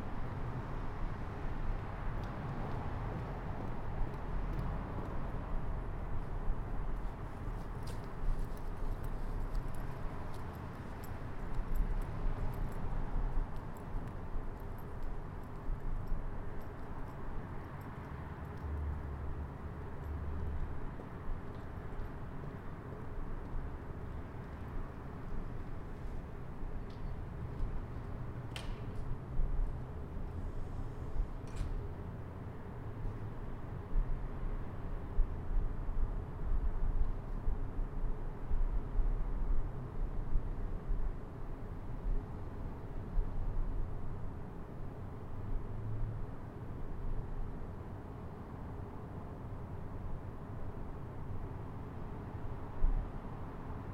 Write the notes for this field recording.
Sitting on the preserve hill, nearby a sports practice, windy, dead cat used. The microphone is the ZoomH1.